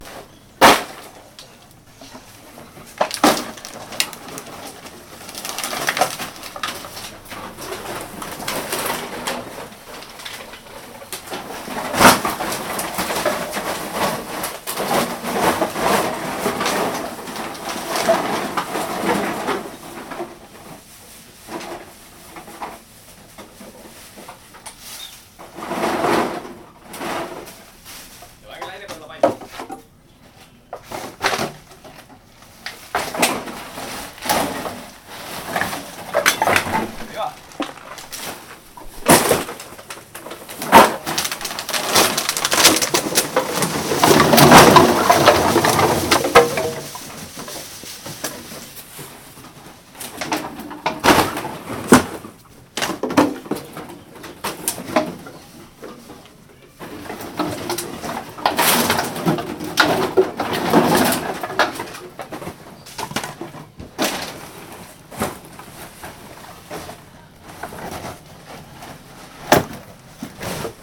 Parque Santander., Cra., Mompós, Bolívar, Colombia - Bodega de reciclaje
Una bodega de reciclaje donde compactan botellas de plástico, cartón y chatarra.
Depresión Momposina, Bolívar, Colombia, 2 May 2022, 10:52